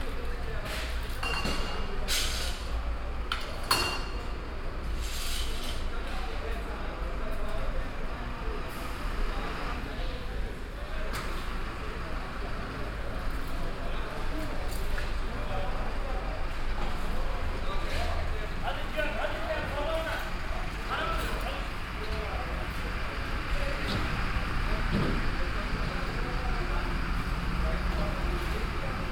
cologne, muelheim, berliner strasse, markt abbau
abbau des wochenmarkts am frühen nachmittag - lkw beladen, stände einräumen und abbauen, türkische kommunikationen
soundmap nrw: social ambiences/ listen to the people - in & outdoor nearfield recordings
27 August 2008